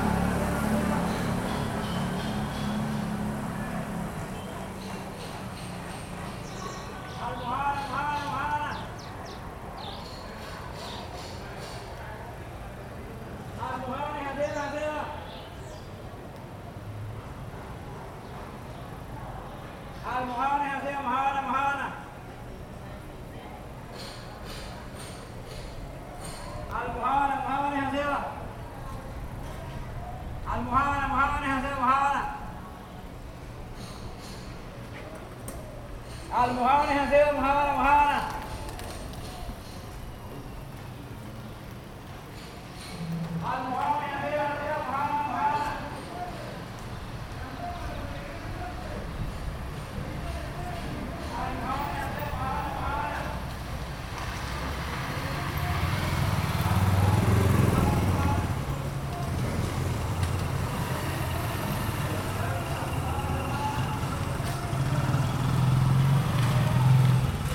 {
  "title": "Cra., Mompós, Bolívar, Colombia - Protesta",
  "date": "2022-04-27 10:50:00",
  "description": "People protest outside a public building. An street vendor passes by...",
  "latitude": "9.24",
  "longitude": "-74.42",
  "altitude": "18",
  "timezone": "America/Bogota"
}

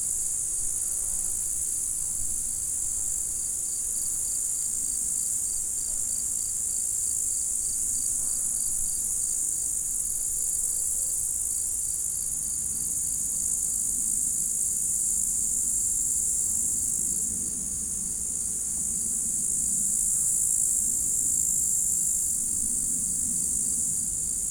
{
  "title": "Col du sapenay, Entrelacs, France - Prairie altitude",
  "date": "2022-07-10 18:15:00",
  "description": "Une prairie ensoleillée au col du Sapenay, polyrythmie naturelle des stridulations, grillons, criquets, sauterelles. De temps à autre utilisée comme pâturage pour les vaches.",
  "latitude": "45.82",
  "longitude": "5.87",
  "altitude": "893",
  "timezone": "Europe/Paris"
}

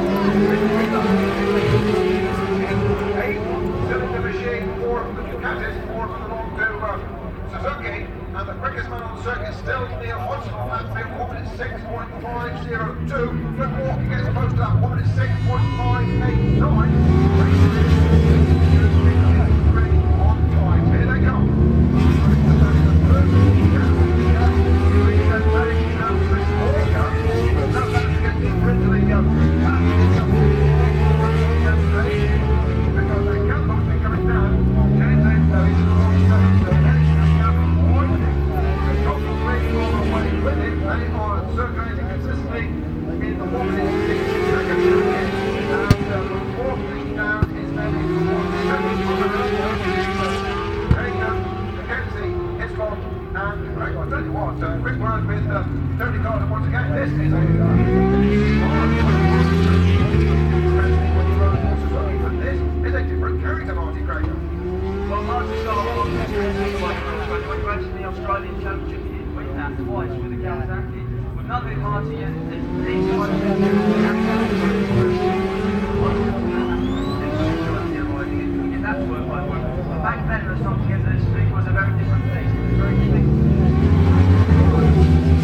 {
  "title": "Unit 3 Within Snetterton Circuit, W Harling Rd, Norwich, United Kingdom - British Superbikes 2000 ... superbikes ...",
  "date": "2000-06-25 12:00:00",
  "description": "British Superbikes ... 2000 ... race one ... Snetterton ... one point stereo mic to minidisk ... time approx ...",
  "latitude": "52.46",
  "longitude": "0.95",
  "altitude": "41",
  "timezone": "Europe/London"
}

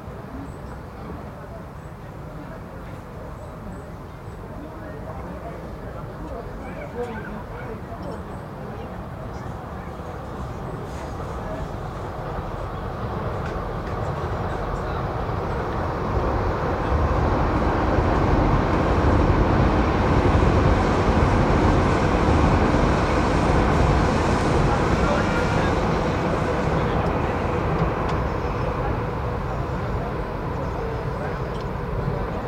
{
  "title": "Quai de l'Ourthe, Esneux, Belgique - Restaurant by the Ourthe River",
  "date": "2022-07-18 18:58:00",
  "description": "Train passing by on the other side, ducks, small birds, people talking and walking on the restaurant's dock, bells at 19.00.\nTech Note : Sony PCM-M10 internal microphones.",
  "latitude": "50.57",
  "longitude": "5.58",
  "altitude": "79",
  "timezone": "Europe/Brussels"
}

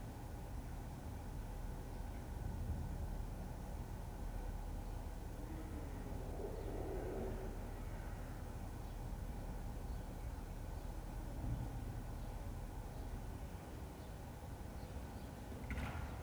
{
  "title": "Hiddenseer Str., Berlin, Germany - The Hinterhof from my 3rd floor window. Tuesday, 4 days after Covid-19 restrictions",
  "date": "2020-03-24 09:20:00",
  "description": "More activity today. The sonic events are very musically spaced to my ear.",
  "latitude": "52.54",
  "longitude": "13.42",
  "altitude": "60",
  "timezone": "Europe/Berlin"
}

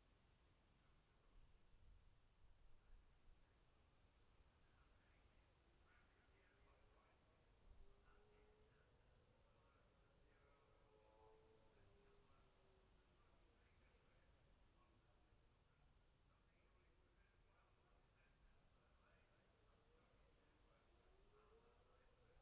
{
  "title": "Jacksons Ln, Scarborough, UK - Gold Cup 2020 ...",
  "date": "2020-09-11 12:49:00",
  "description": "Gold Cup 2020 ... sidecars practice ... Memorial Out ... dpa 4060s to Zoom H5 ...",
  "latitude": "54.27",
  "longitude": "-0.41",
  "altitude": "144",
  "timezone": "Europe/London"
}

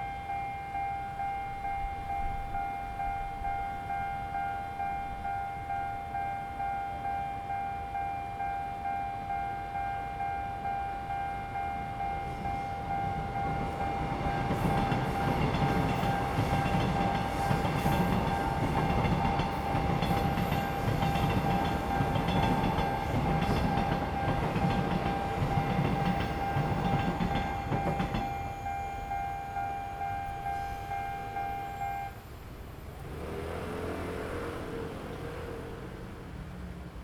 {
  "title": "Xinxing Rd., Xinfeng Township - In the railway level road",
  "date": "2017-02-07 13:43:00",
  "description": "In the railway level road, Traffic sound, Train traveling through\nZoom H2n MS+XY",
  "latitude": "24.87",
  "longitude": "120.99",
  "altitude": "60",
  "timezone": "GMT+1"
}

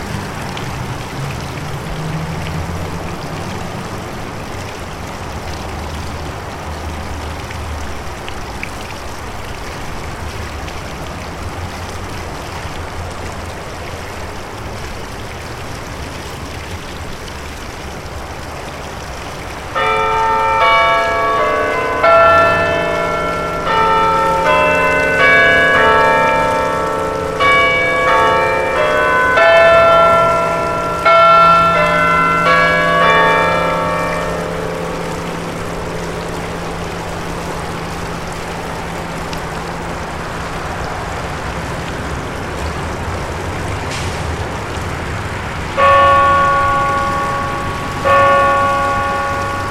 {
  "title": "Victoria Square Fountain - 7:00pm Clock Bells, Adelaide, South Australia - Victoria Square Fountain, 7:00pm Clock Bells",
  "date": "2008-09-01 18:31:00",
  "description": "Victoria Square in the centre of Adelaide, South Australia. The main fountain is turned off at night leaving just a small bubbling waterfall. The clock in the Post Office tower strikes 7:00pm. A tram leaves the stop and heads north along King William Street. Other traffic travels the road, still wet from recent rain.\nRecorded with Sennheiser ME66.\nRecording made at 7:00pm on 1st Sept 2008",
  "latitude": "-34.93",
  "longitude": "138.60",
  "altitude": "58",
  "timezone": "Australia/South"
}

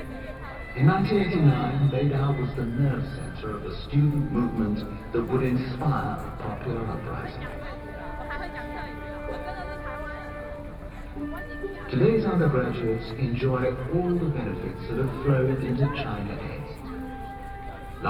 {
  "title": "National Chiang Kai-shek Memorial Hall, Taipei - June 4th event activity",
  "date": "2013-06-04 19:31:00",
  "description": "The Gate of Heavenly Peace., Sony PCM D50 + Soundman OKM II",
  "latitude": "25.04",
  "longitude": "121.52",
  "altitude": "8",
  "timezone": "Asia/Taipei"
}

